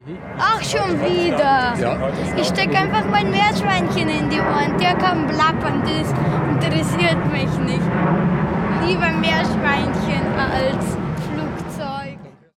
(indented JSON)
{
  "title": "Wollankstraße, Soldiner Kiez, Wedding, Berlin, Deutschland - Wollankstraße, Berlin - Special anti-noise strategy involving guinea pigs",
  "date": "2012-11-10 12:05:00",
  "description": "As the boy remarks, a guinea pig in your ears may effectively mask aircraft noise.\nEin Meerschweinchen am Ohr schützt gegen Fluglärm.",
  "latitude": "52.56",
  "longitude": "13.39",
  "altitude": "45",
  "timezone": "Europe/Berlin"
}